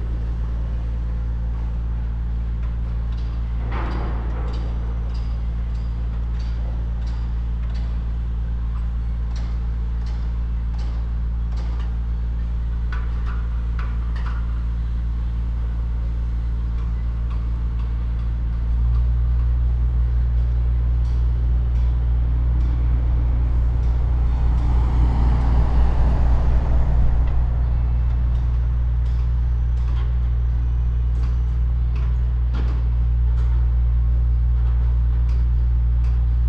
Roman-Herzog-Straße, München, Deutschland - Major Construction Site Freiham
A new district of Munich is being built in Freiham.
Bayern, Deutschland, 30 September 2021